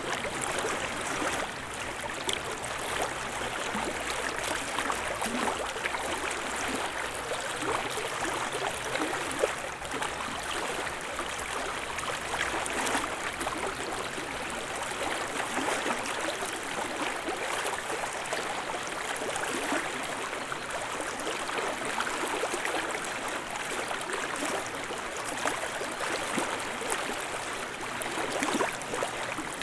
Close-up recording of Vilnelė river shore. Recorded with ZOOM H5.
Vilniaus apskritis, Lietuva